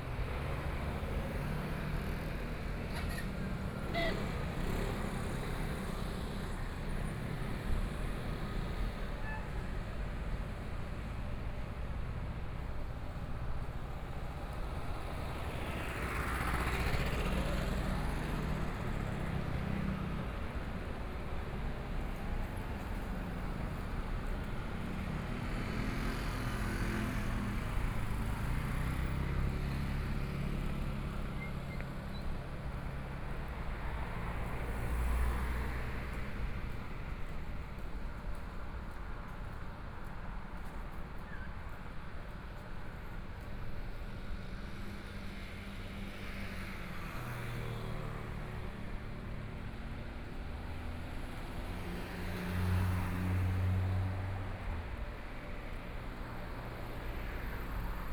Chengxing Rd., Dongshan Township - walking in the Street
Walking the streets of the town, Morning Market Bazaar, Binaural recordings, Zoom H4n+ Soundman OKM II